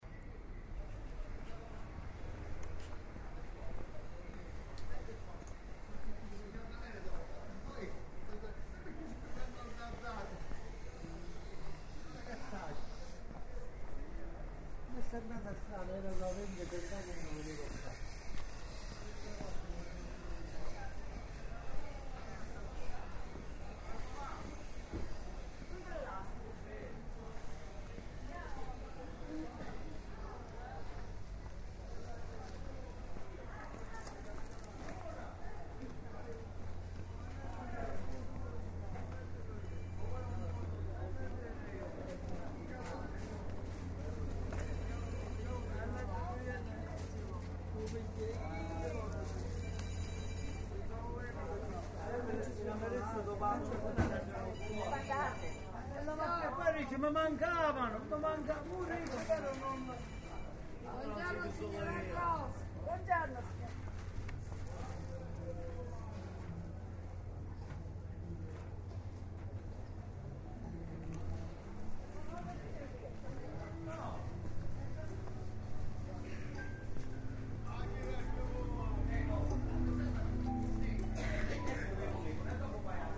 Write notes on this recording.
Mercato Vucciria, h11.00 22/01/2010 (romanlux)